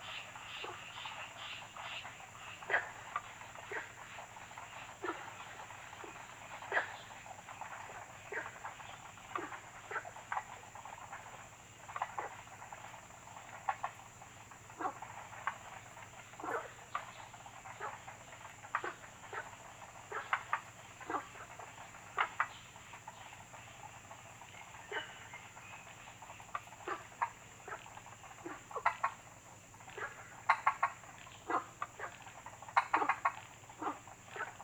草湳, 桃米里 Puli Township - Ecological pool
Frogs chirping, Birds called
Zoom H2n MS+ XY
Nantou County, Taiwan, 3 May 2016